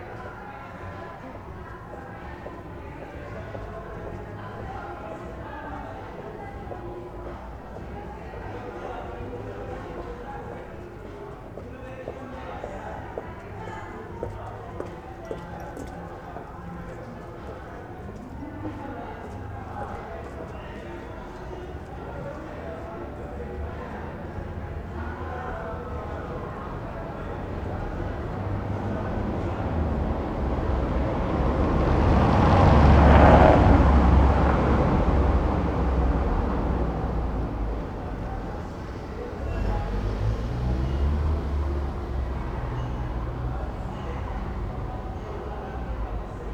berlin, friedelstraße: vor bar - the city, the country & me: in front of a bar
the city, the country & me: september 10, 2011